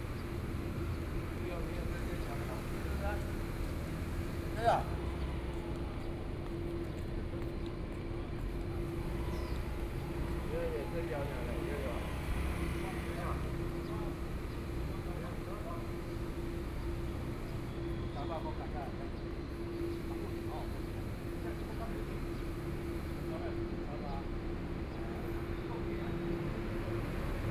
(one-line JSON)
{"title": "下埤公園, Taipei City - Sitting in the park", "date": "2014-04-03 12:43:00", "description": "Near the airport noise, Traffic Sound, Dogs barking", "latitude": "25.07", "longitude": "121.54", "altitude": "12", "timezone": "Asia/Taipei"}